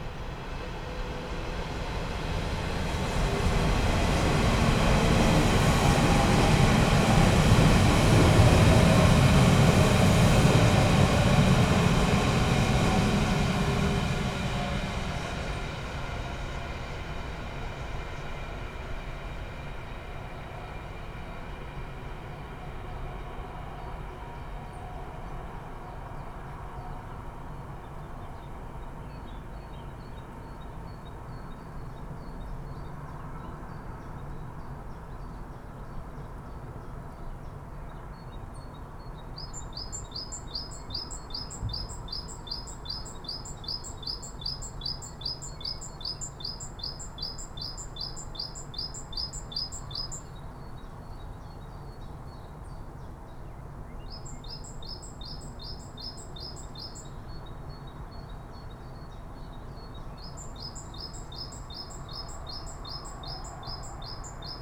Schöneberger Südgelände, Berlin - pedestrian bridge, entrance to park, ambience
on the iron pedestrian bridge, entrance to art & nature park Schöneberger Südgelände, which is closed during the night and opens 9:00am. Area ambience with trains, heard on top of the bridge.
(Sony PCM D50, DPA4060)